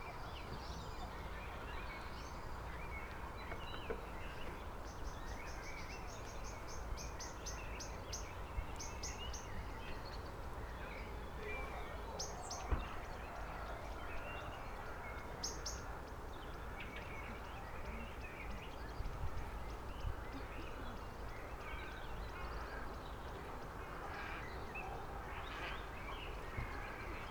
river bed, Drava - almost dark, birds above the river, swans, crows, ducks